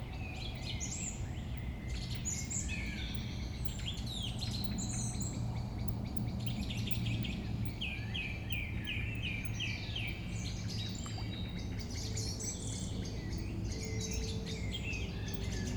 Beselich, Niedertiefenbach, Waldteich / pond in forest - Ton, evening in spring
little pond in the forest, spring, this area is called Ton (clay), from former clay mining.
evening birds, party sound, planes and cars in the distance. i remember this place to be more quiet. this memory may be wrong.
Germany, 2 June 2010, 21:55